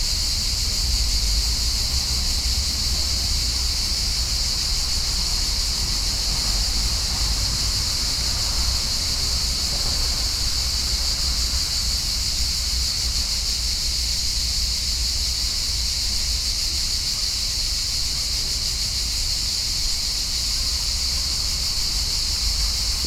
Parque Vale do Silêncio, Lisbon, Portugal - Summer cicadas
High volume cicadas on the park, Church-audio binaurals + zoom H4n
August 2013